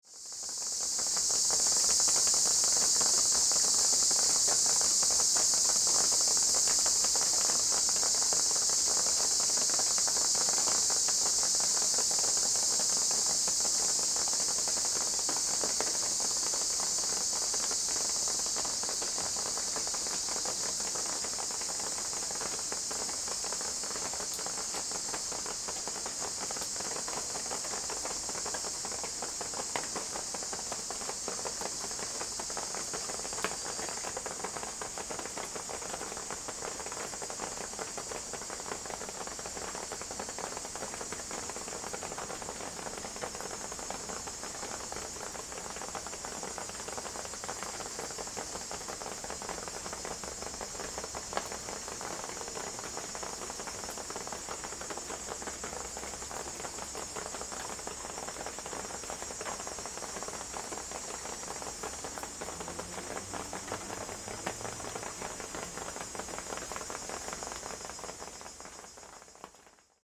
富陽自然生態公園, Da'an District, 台北市 - Cicadas cry and rain
Thunder, in the park, Rainy Day
Zoom H2N MS+XY